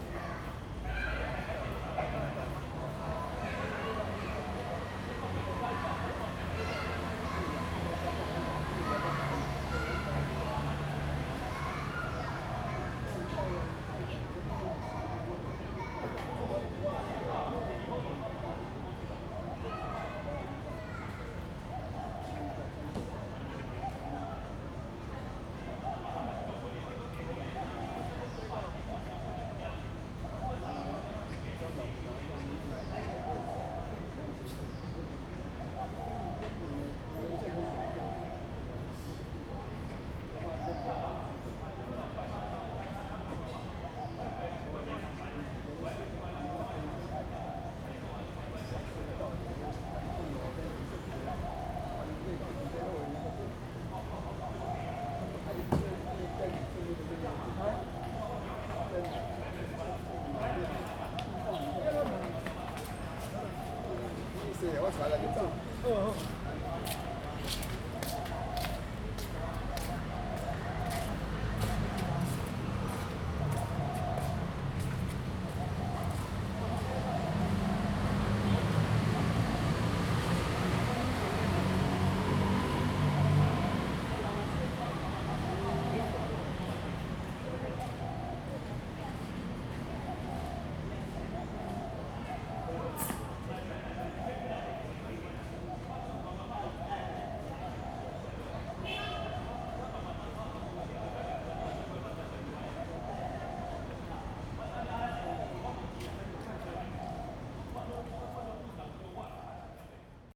全安公園, 大安區, Taipei City - in the Park
in the Park, After a rain, Traffic Sound, birds sound, The elderly and children
Zoom H2n MS+ XY